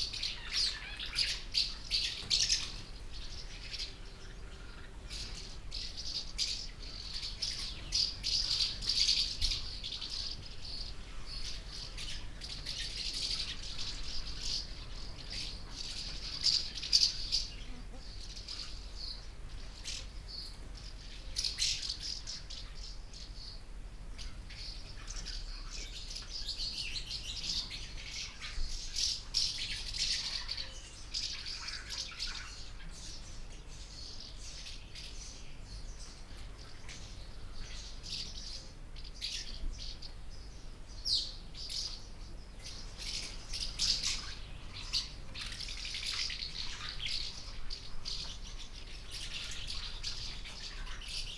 Odervorland Groß Neuendorf-Lebus, Deutschland - colony of housemartins
Groß-Neuendorf, river Oder, former harbour building, a colony of housemartins (in german: Mehlschwalben)
(Sony PCM D50, DPA4060)
Letschin, Germany, 31 May